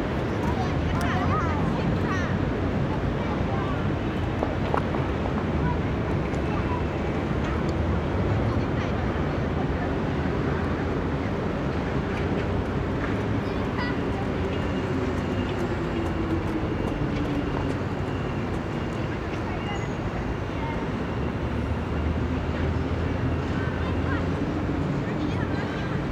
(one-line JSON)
{"title": "華江碼頭, Banqiao Dist., New Taipei City - In Riverside Park", "date": "2012-01-19 14:28:00", "description": "In Riverside Park, Child and mother, Traffic Sound, Firecrackers\nZoom H4n+Rode NT4", "latitude": "25.03", "longitude": "121.48", "altitude": "2", "timezone": "Asia/Taipei"}